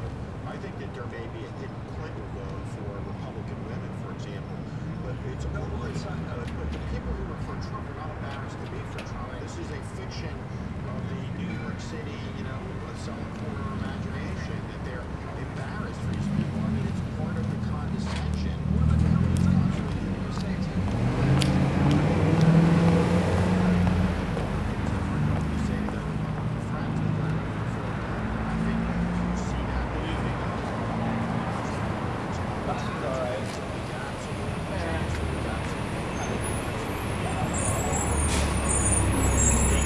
Flatbush - Ditmas Park, Brooklyn, NY, USA - Election Night in Brooklyn
Election Night in Brooklyn.
Zoom H4n
8 November 2016